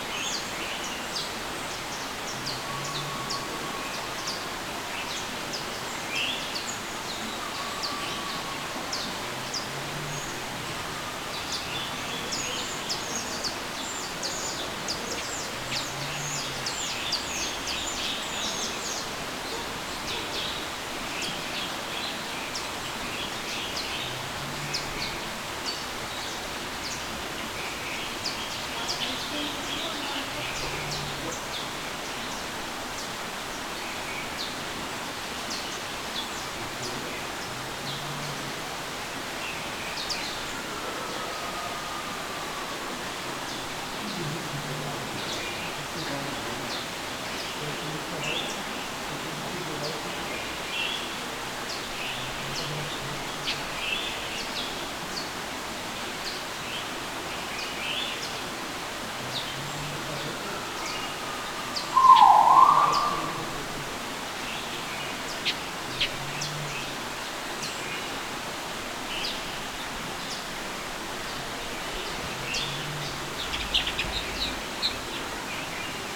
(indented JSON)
{
  "title": "Tropical House Cologne Zoo, Cologne, Germany - Tropical House Cologne Zoo",
  "date": "2022-03-08 12:30:00",
  "description": "Record from inside the tropical house of Cologne Zoo /w Zoom H6 Black",
  "latitude": "50.96",
  "longitude": "6.98",
  "altitude": "46",
  "timezone": "Europe/Berlin"
}